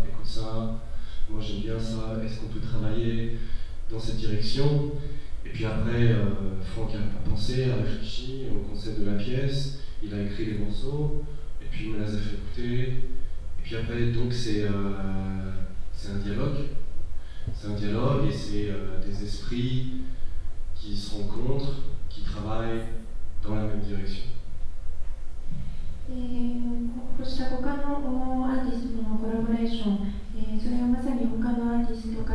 yokohama, kaat theatre, public interview
Inside the big studio of the KAAT Theatre (Kanagawa Arts Theater). A female japanese translator translating the answers of french choreographer and dancer Fabien Prioville after a show of his solo performance Jailbreak Mind.
international city scapes - topographic field recordings and social ambiences